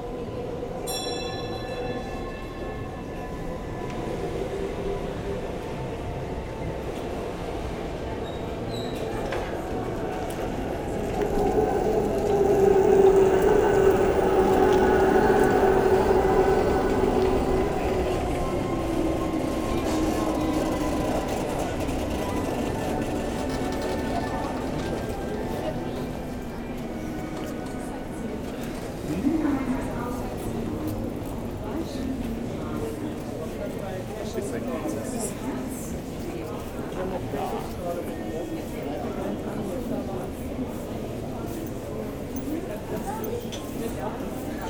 Maastricht, Pays-Bas - Water mill
Into narrow cobblestones streets, a German tourists group is visiting Maastricht. I'm entering into a bakkery, behind there's an enormous water mill. At the end, a Spanish tourists group leaves with the bikes.
Maastricht, Netherlands, 20 October 2018